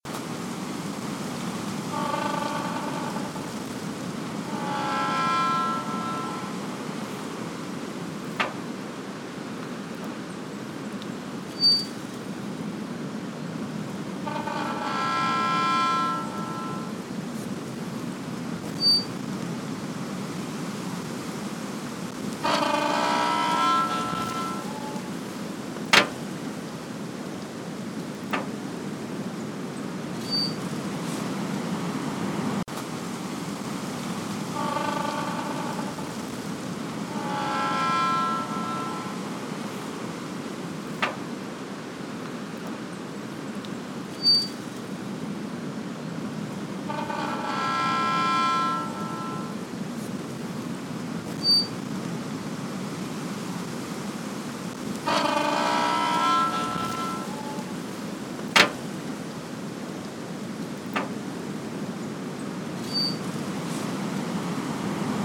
Grange Beg, Co. Tipperary, Ireland - Gate Swiss Cottage
Sounding Lines
by artists Claire Halpin and Maree Hensey